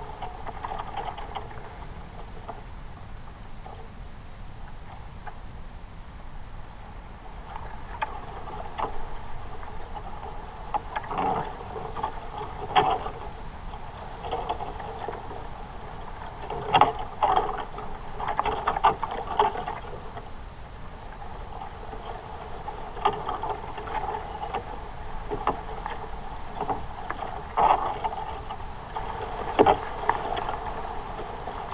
미조항 대왕태나무 2번 방문 Giant bamboo 2nd visit
미조항 대왕태나무 2번 방문_Giant bamboo 2nd visit
대한민국, 26 March 2022